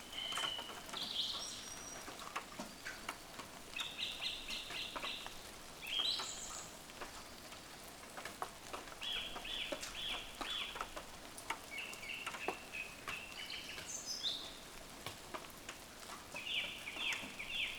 Nad Závěrkou, Praha, Czechia - Morning Rain and Birds from my Window
A dawn recording of birds and rain from my window overlooking a wooded park. The park is home to many doves, jays, magpies and numerous kinds of little birds I don’t know the names of.